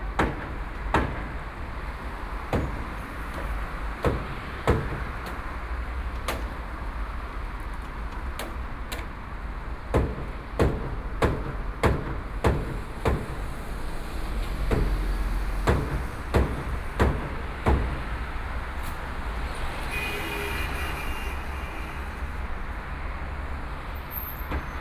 Zhongshan South Road, Shanghai - Beat
At the roadside, Traffic Sound, Beat the removal of wooden furniture, Binaural recording, Zoom H6+ Soundman OKM II
Shanghai, China, 29 November 2013